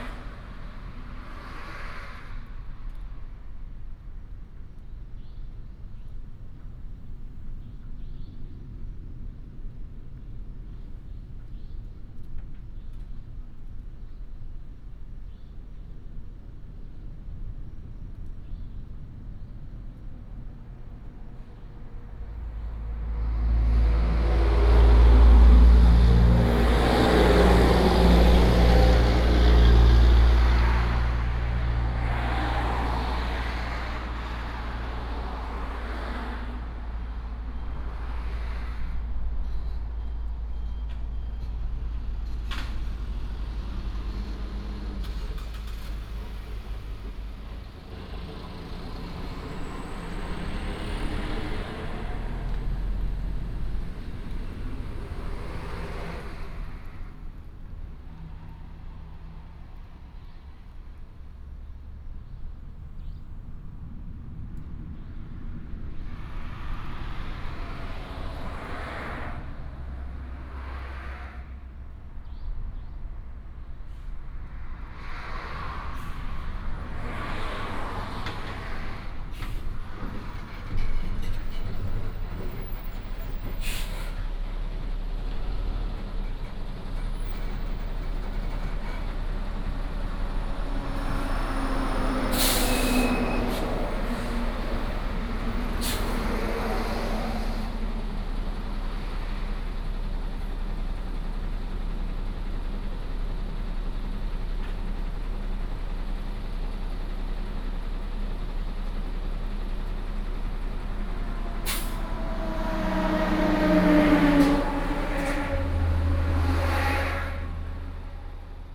全家大竹店, Dawu Township - Traffic the waves sound
Shop by the highway, Traffic sound, Sound of the waves, Bird cry, Gecko call
2 April 2018, 21:10